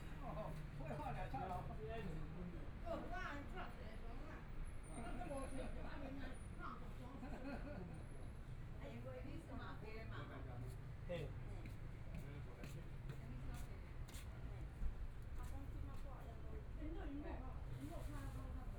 Zhongshan District, Taipei City - Chat between elderly
Chat between elderly, Traffic Sound, Motorcycle sound, Binaural recordings, Zoom H4n + Soundman OKM II
Taipei City, Taiwan, 6 February 2014